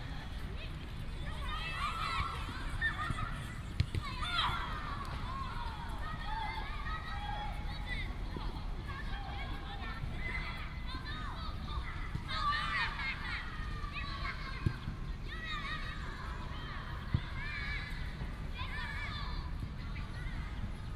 Sports ground in elementary school, Many children play football, Traffic sound, birds sound
Binaural recordings, Sony PCM D100+ Soundman OKM II